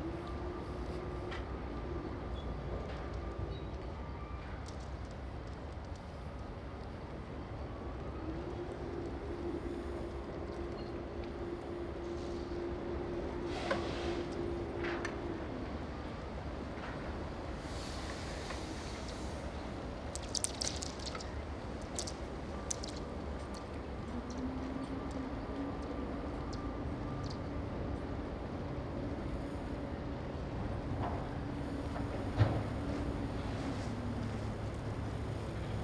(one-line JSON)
{"title": "Binckhorst Harbour, Saturnusstraat", "date": "2011-12-09 15:20:00", "description": "Cranes unloading gravel from ship. splashing water. Sony MS mic. Binckhorst Mapping Project", "latitude": "52.07", "longitude": "4.35", "altitude": "2", "timezone": "Europe/Amsterdam"}